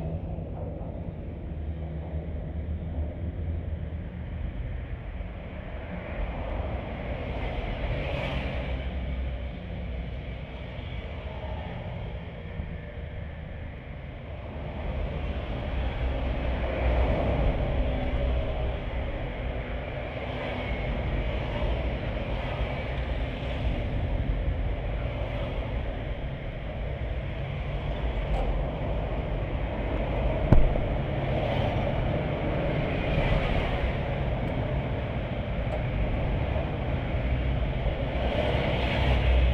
Tamsui, New Taipei City - Guandu Bridge
Guandu Bridge, Contact microphone fixed on the road surface of the bridge, Zoom H4n+Contact Mic.